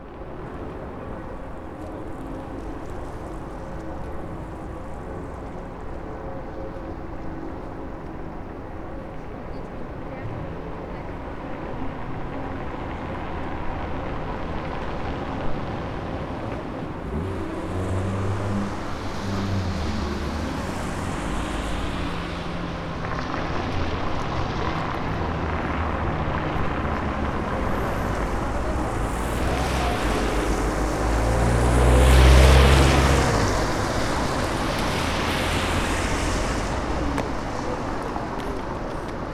Berlin: Vermessungspunkt Maybachufer / Bürknerstraße - Klangvermessung Kreuzkölln ::: 24.12.2011 ::: 15:04